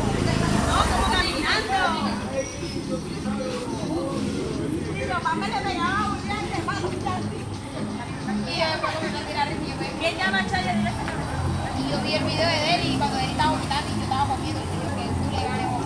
Cancha de basquet, Mompós, Bolívar, Colombia - Mujeres y balón
Un grupo de adolescentes se prepara para jugar fútbol en una cancha de cemento junto al río Magdalena